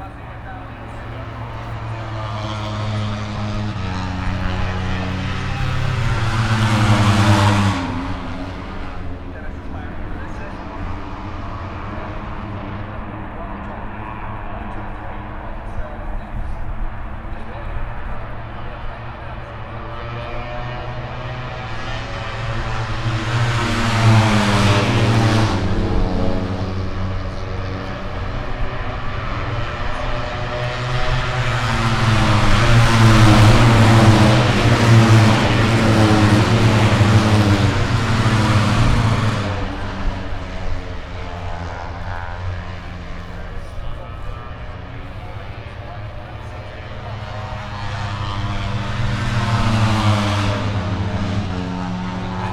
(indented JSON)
{
  "title": "Silverstone Circuit, Towcester, UK - british motorcycle grand prix 2022 ... moto three ...",
  "date": "2022-08-05 13:09:00",
  "description": "british motorcycle grand prix ... moto three free practice two ... dpa 4060s on t bar on tripod to zoom f6 ...",
  "latitude": "52.07",
  "longitude": "-1.01",
  "altitude": "157",
  "timezone": "Europe/London"
}